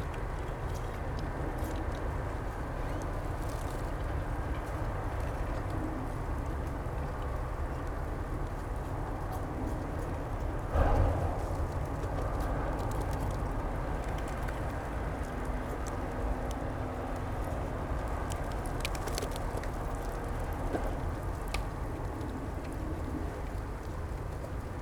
Berlin, Plänterwald, Spree - late winter, ice crack, ambience
sunny and very cold afternoon, river Spree partly frozen, ice cracks and industrial ambience
(Sony PCM D50, DPA4060)
Berlin, Germany